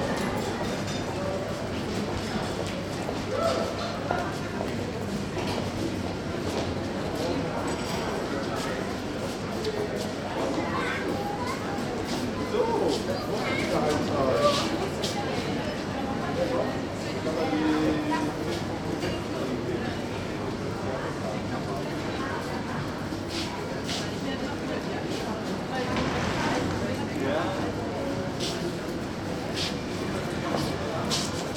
Ostbahnhof - Eingangsbereich / entry area
22.03.2009 17:25 Berlin Ostbahnhof, Eingangsbereich / entry area, sunday afternoon, crowded